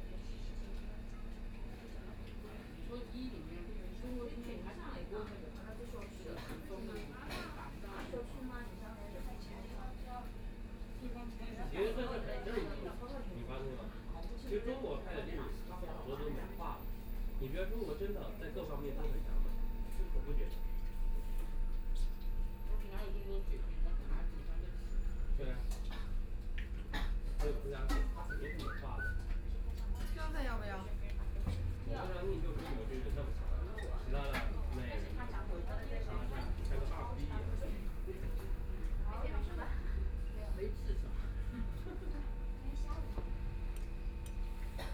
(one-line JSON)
{"title": "Siping Road, Shanghai - In the restaurant", "date": "2013-11-23 19:35:00", "description": "In the restaurant, Binaural recording, Zoom H6+ Soundman OKM II", "latitude": "31.30", "longitude": "121.51", "altitude": "6", "timezone": "Asia/Shanghai"}